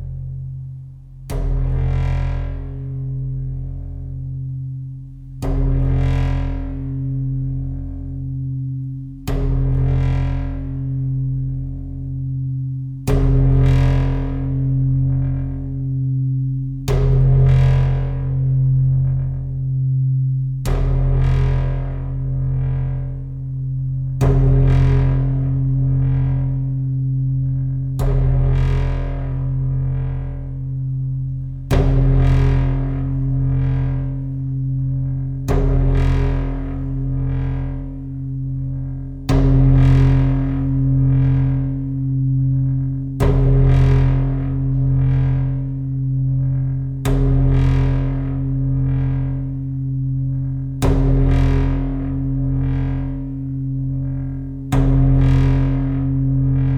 {
  "title": "Seraing, Belgium - Playing with a sink",
  "date": "2017-10-29 11:00:00",
  "description": "Playing with a sink could be a dangerous activity. In this abandoned factory, I found two huge metallic sink. Huh, this could be a good strange music instrument. So, I'm knocking it. During this time, two romanian people arrive. They were thinking I was destroying it in aim to steal the aluminum (for us and here in Seraing this is absolutely normal). They said me : be careful, there's photographers just near, its dangerous ! And... they saw the recorder, planted in the sink. They had a look to me and really... I think they understood nothing ! Not destroying the sink ?? But what's this weird guy is doing ?? Probably I was lucky not to be molested. So, here is just two minutes of a stupid guy playing with a sink ; when people arrive, I tidy and hide everything as quickly as I can.",
  "latitude": "50.60",
  "longitude": "5.54",
  "altitude": "82",
  "timezone": "Europe/Brussels"
}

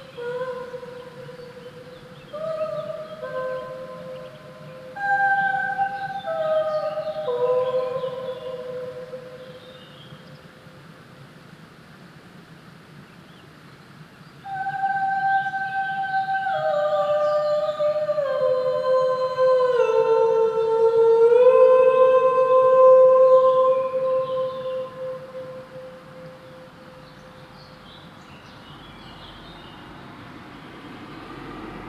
{
  "title": "Rue des Ardennes, Saint-Hubert, Belgique - Reverberation under the bridge.",
  "date": "2022-05-27 15:00:00",
  "description": "Voice and percussion improvisation by Alice Just.\nWater on the right, birds, cars passing under the bridge.\nTech Note : SP-TFB-2 binaural microphones → Olympus LS5, listen with headphones.",
  "latitude": "50.02",
  "longitude": "5.28",
  "altitude": "311",
  "timezone": "Europe/Brussels"
}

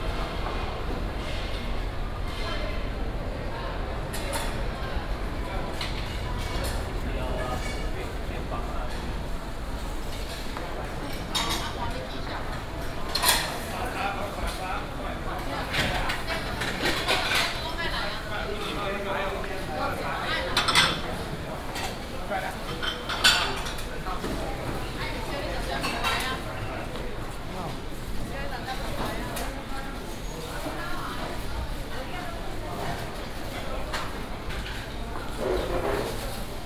{
  "title": "新竹市公有竹蓮零售市場, Hsinchu City - Public retail market",
  "date": "2017-09-21 07:22:00",
  "description": "Walking in the traditional market, Public retail market, traffic sound, vendors peddling, Binaural recordings, Sony PCM D100+ Soundman OKM II",
  "latitude": "24.80",
  "longitude": "120.97",
  "altitude": "36",
  "timezone": "Asia/Taipei"
}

